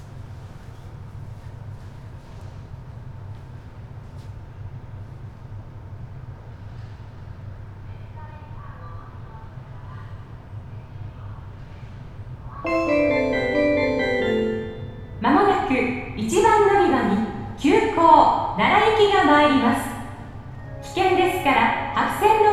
kuramaguchi subway station, kyoto - artificial voices, one ore two passengers
Kyōto-shi, Kyōto-fu, Japan, 31 October, 14:37